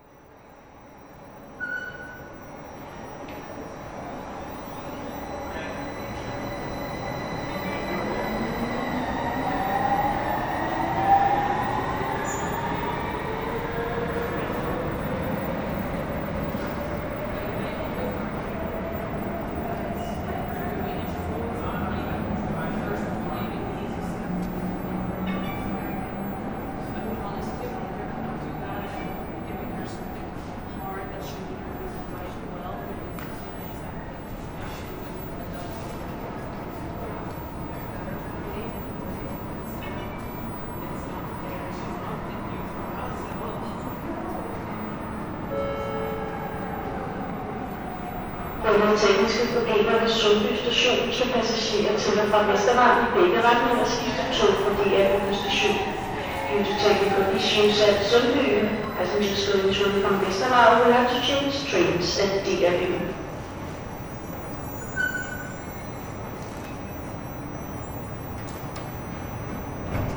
Frederiksberg, Denmark - Copenhagen metro
The Copenhagen metro, into the Fasanvej station. It's very quiet because Danish people use bikes to commute.
16 April 2019, 10:30